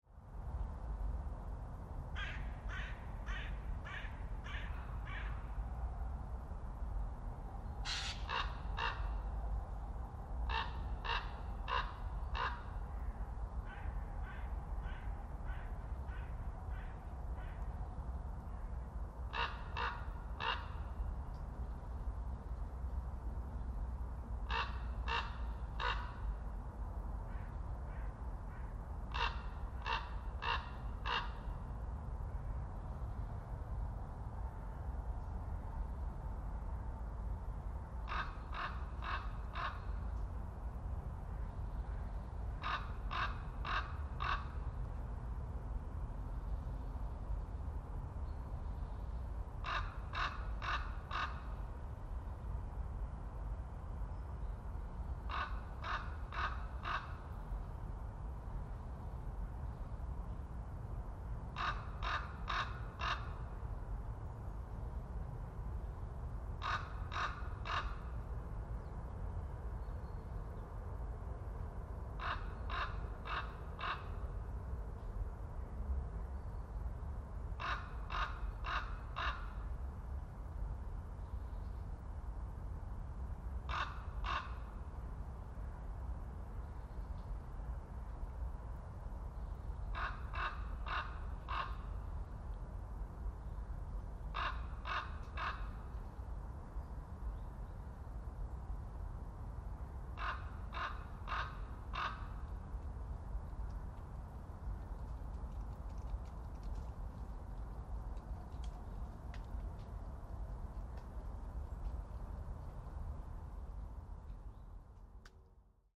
A crow crows, then a more distant jay screeches harshly before two ravens take over with their majestic croaks. This is one of my favourite bird calls, instantly recognisable. There is a softeness but these sounds carry a long distance, especially when uttered high in flight.
Deutschland, November 2021